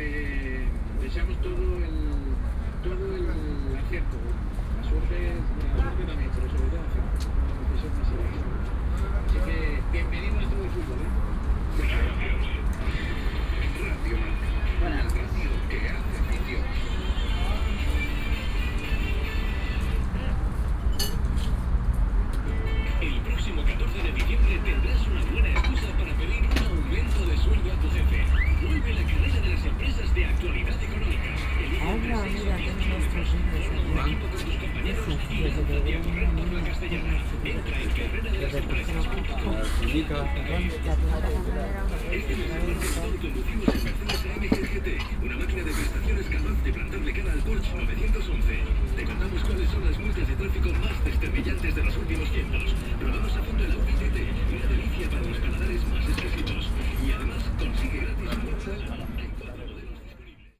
standing in front of a stall which was stacked with books and albums. somewhere between the books was a hidden radio or a small tv.